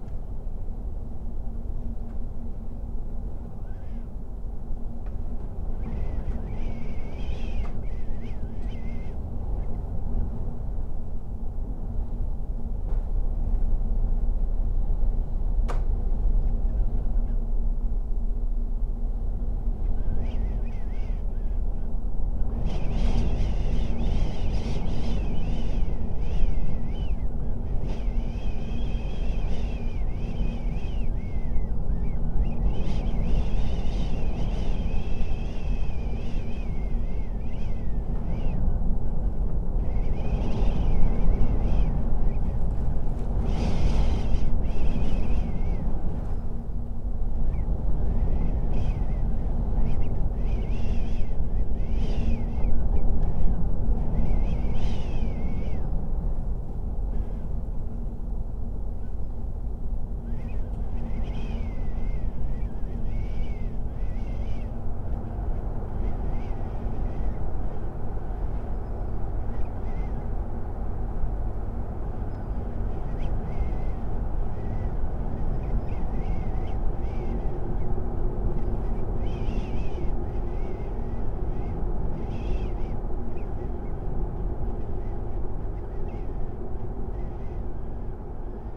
storm through slightly open window